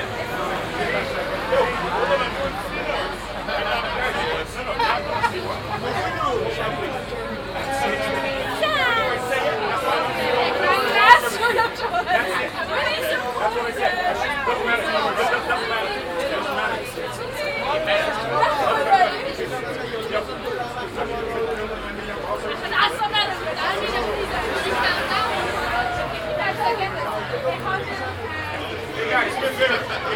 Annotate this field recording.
midnight in downtown. crowd standing in a row in front of a disco, soundmap international, social ambiences/ listen to the people - in & outdoor nearfield recordings